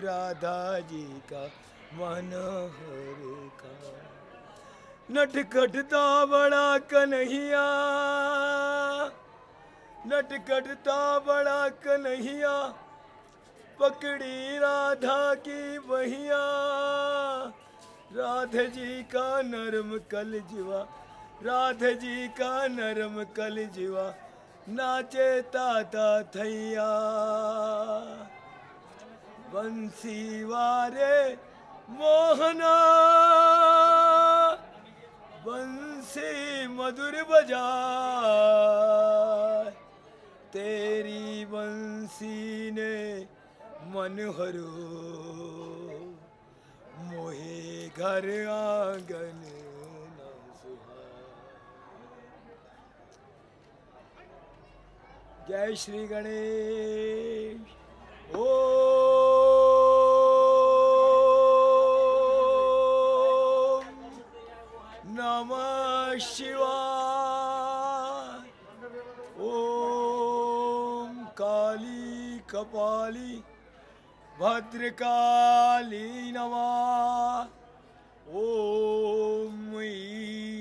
Jodhpur, Rajasthan, Inde - Jodhpur - Ambiance temple
Jodhpur - Rajasthan
Un chauffeur de rickshaw s'amuse de mon matériel de "preneur de son" et tient à m'interpréter un chant à la gloire des divinités hindous.
Foxtex FR2 + Audio-Technica AT825